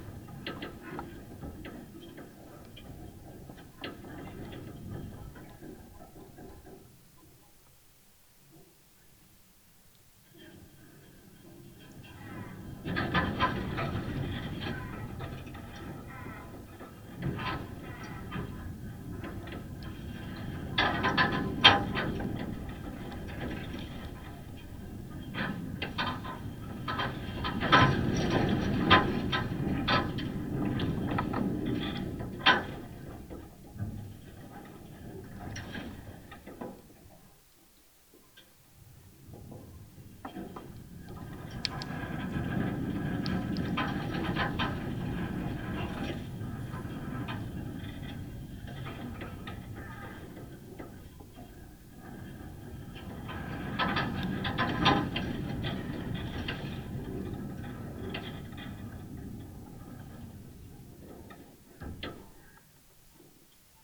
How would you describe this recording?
a fence in the wind as heard through contact microphone